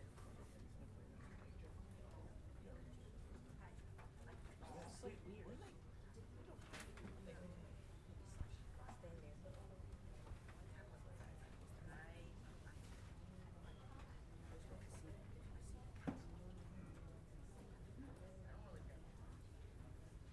{
  "title": "Flushing, Queens, NY, USA - Queens Library Travel Guide Section",
  "date": "2017-03-04 11:24:00",
  "description": "Queens Library (main branch) 2nd floor Travel Guide Section",
  "latitude": "40.76",
  "longitude": "-73.83",
  "altitude": "17",
  "timezone": "America/New_York"
}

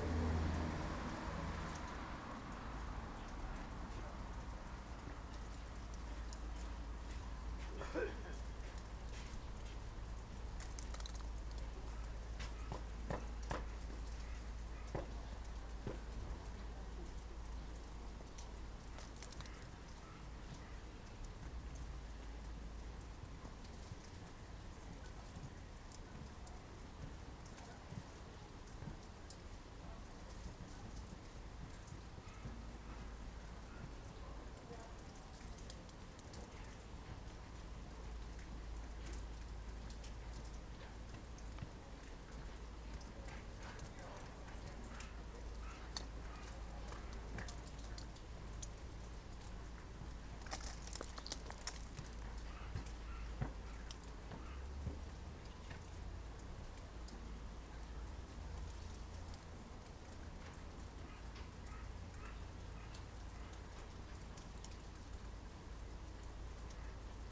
{
  "title": "Zenkō-ji Temple Bell, Motoyoshichō Nagano, Nagano-shi, Nagano-ken, Japan - Zenkō-ji Temple Bell",
  "date": "2017-02-13 13:00:00",
  "description": "This is a recording made of the special bell at the Zenkō-ji Temple in Nagano being struck to signal the hour. The bell hangs in a special tower, and there is a long beam that a special bell-ringer unties and then gently drives into the side of the bell, producing the sound. You can hear the leather strapping in which the beam is secured, the footsteps of the bell-ringer, and the melting snow all around; it was a bright, crisp day and lovely to sit in the sunshine and listen to the thaw and to this wonderful bell.",
  "latitude": "36.66",
  "longitude": "138.19",
  "altitude": "407",
  "timezone": "Asia/Tokyo"
}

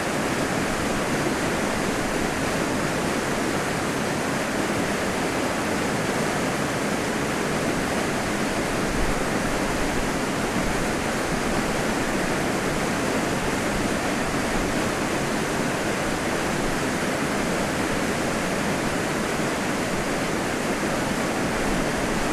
{
  "title": "castrop-rauxel-henrichenburg - rauschen I: emscher-düker",
  "date": "2009-12-21 17:11:00",
  "latitude": "51.59",
  "longitude": "7.30",
  "altitude": "54",
  "timezone": "Europe/Berlin"
}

